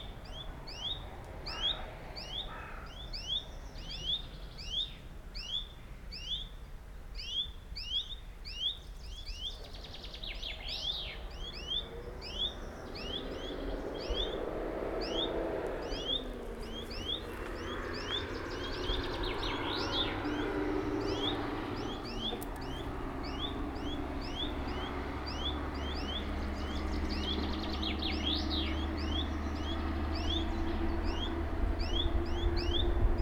Buchet, Deutschland - Vogelwettschreien / Birds battle
Zwei Vögel schreien um die Wette, auf der westlich gelegenen Straße fährt ein Bus, ein Flugzeug fliegt über das Gebiet.
Two birds crying for the bet, a bus drives on the westerly street, a plane flies over the area.
Germany, Germany